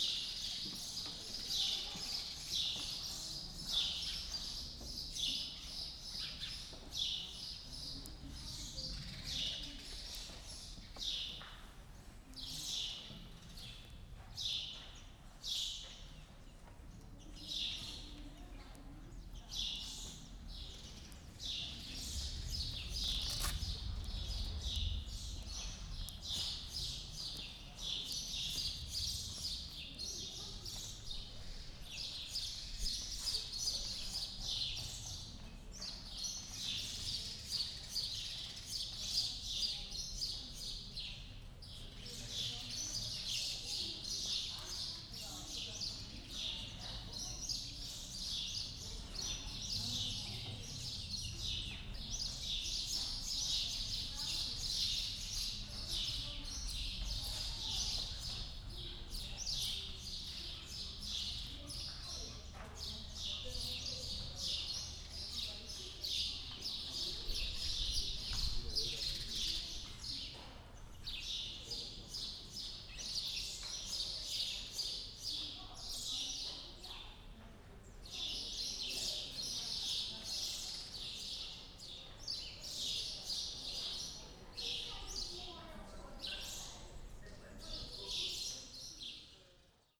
Ħaġar Qim temple, Malta - sparrows under tent
Ħaġar Qim temple, Malta, the place is covered by a big tent, which protects not only the temple against erosion, but also gives shelter to many sparrows.
(SD702, DPA4060)
Il-Qrendi, Malta, 6 April 2017